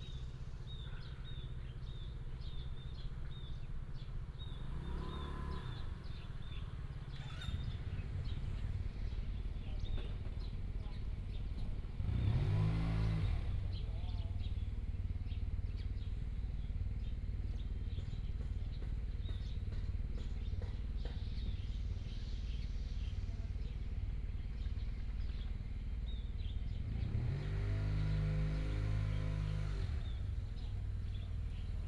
太麻里鄉站前路, Taitung County - In the square
In the square, Square outside the train station, birds sound, Traffic sound, Construction sound
Taimali Township, 站前路2號, 14 March 2018